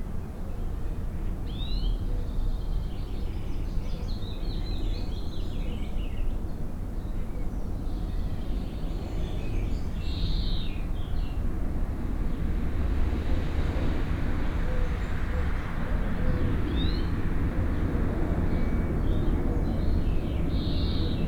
Niévroz, Rue Henri Jomain, blackbird
Niévroz, France, 30 April